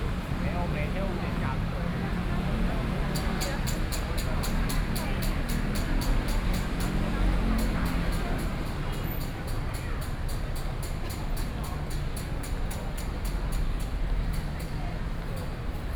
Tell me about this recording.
Go out to the plaza from the station, Traffic Noise, Above the train tracks running through, The crowd, Binaural recordings, Sony PCM D50 + Soundman OKM II